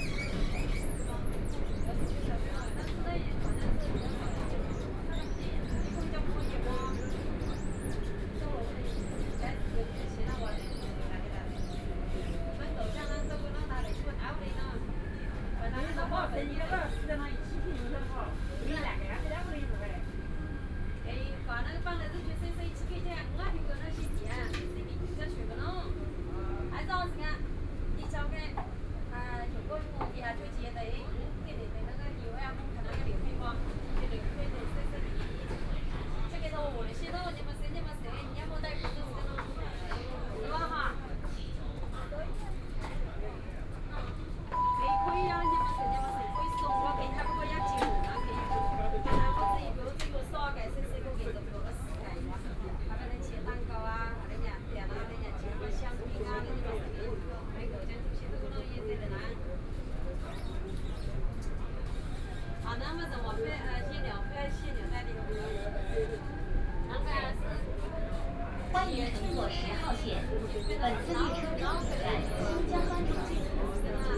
Huangpu District, Shanghai - Line 10 (Shanghai Metro)
from South Shaanxi Road Station to Laoximen Station, Binaural recording, Zoom H6+ Soundman OKM II